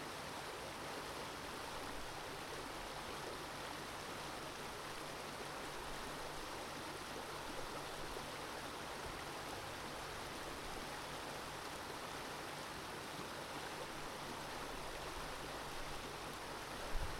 Władysława Łokietka, Gorzów Wielkopolski, Polska - Kłodawka river.
Little cascade on the Kłodawka river.
województwo lubuskie, Polska, 15 February 2020, 13:23